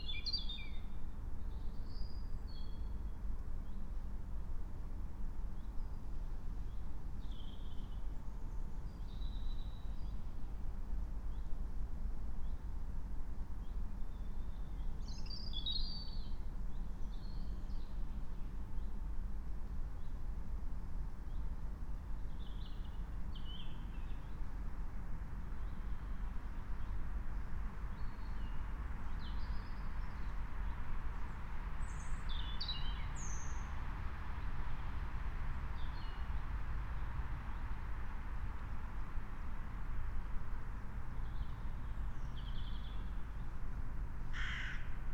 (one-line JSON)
{"date": "2022-04-26 19:03:00", "description": "19:03 Lingen, Emsland - forest ambience near nuclear facilities", "latitude": "52.48", "longitude": "7.32", "altitude": "49", "timezone": "Europe/Berlin"}